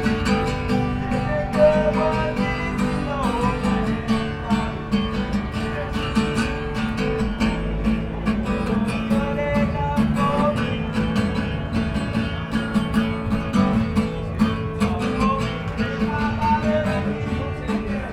neoscenes: Whiskey Row at night
Prescott, AZ, USA, 1 July 2011, ~23:00